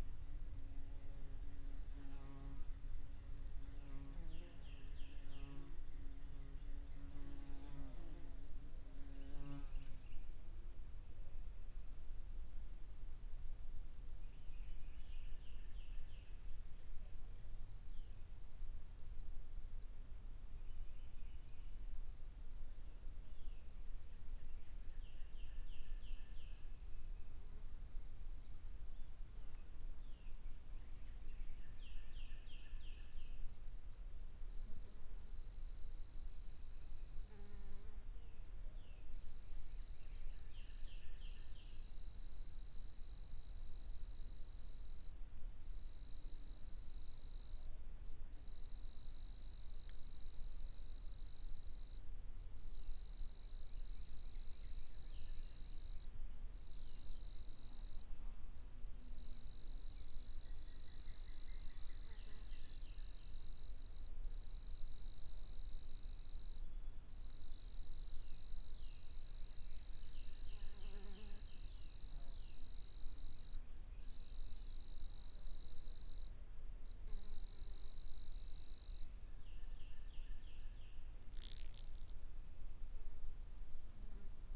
The sounds of the Holla Bend National Wildlife Refuge
Recorded with a Zoom H5
Arkansas, United States, 14 April 2022, 15:45